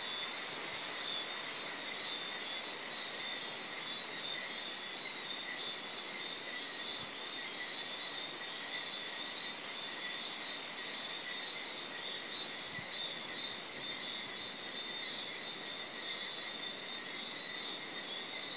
Sainte-Anne, Martinique - Averse à Cap Chevalier
La nuit est tombée depuis une heure, soudain une averse de quelques minutes couvre le bruissement des cabrit-bois. Cap Chevalier, quartier résidentiel de la campagne martiniquaise.